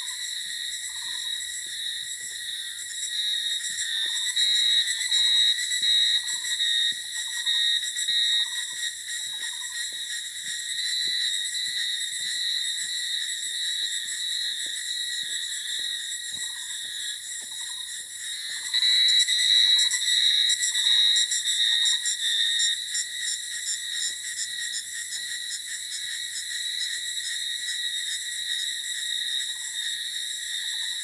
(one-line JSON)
{"title": "金龍山曙光, Yuchi Township - In the woods", "date": "2016-05-18 05:28:00", "description": "In the woods, Bird sounds, Cicada sounds\nZoom H2n MS+XY", "latitude": "23.90", "longitude": "120.91", "altitude": "771", "timezone": "Asia/Taipei"}